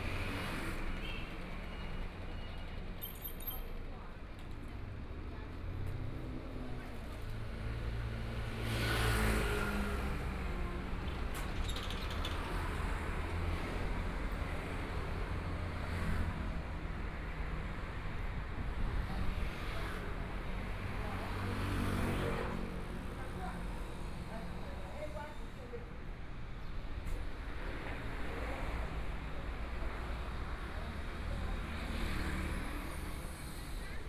Jianguo Rd., Ji'an Township - walking on the Road
walking on the Road, Traffic Sound, Environmental sounds, Sound from various of shops and restaurants
Please turn up the volume
Binaural recordings, Zoom H4n+ Soundman OKM II
Hualien County, Taiwan, 24 February 2014